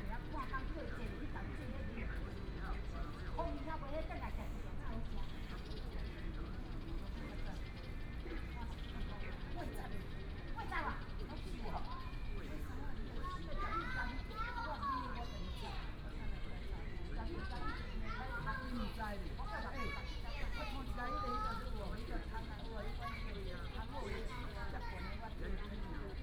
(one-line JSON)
{"title": "Lanzhou Park, Datong Dist., Taipei City - in the Park", "date": "2017-04-09 16:39:00", "description": "in the Park, sound of the birds, Traffic sound, frog sings", "latitude": "25.06", "longitude": "121.52", "altitude": "11", "timezone": "Asia/Taipei"}